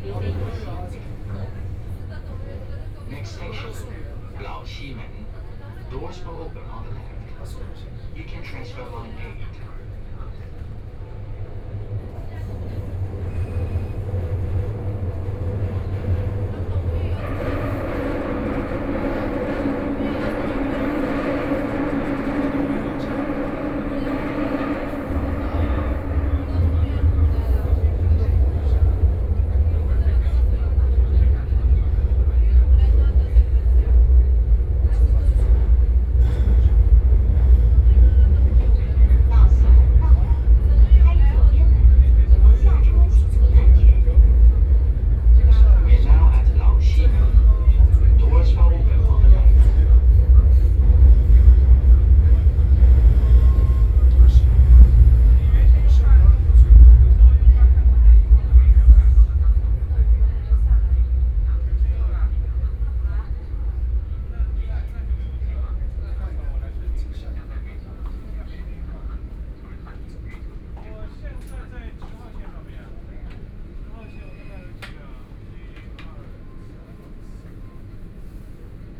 Huangpu District, Shanghai - Line 10 (Shanghai Metro)
from East Nanjin Road Station to Laoximen Station, Binaural recordings, Zoom H6+ Soundman OKM II
2 December 2013, 13:04